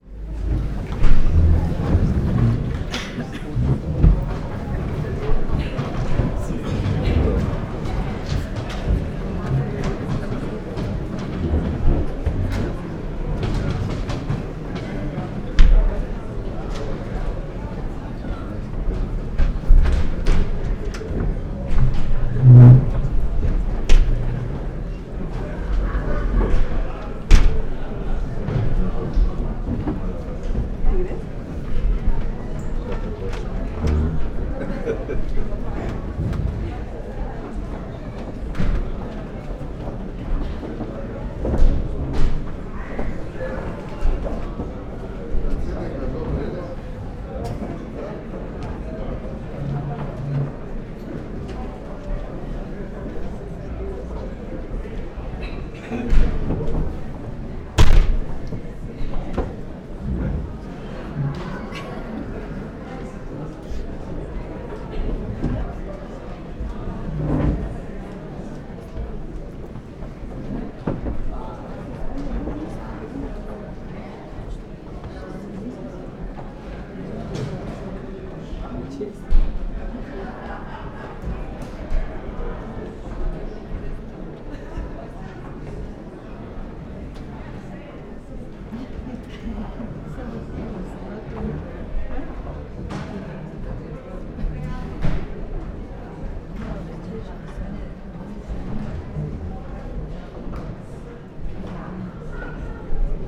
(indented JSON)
{
  "title": "from balcony, second floor, National Theater Maribor - after a show",
  "date": "2015-04-10 21:44:00",
  "description": "people leaving old hall, wooden floor, chairs, slapping doors",
  "latitude": "46.56",
  "longitude": "15.64",
  "altitude": "280",
  "timezone": "Europe/Ljubljana"
}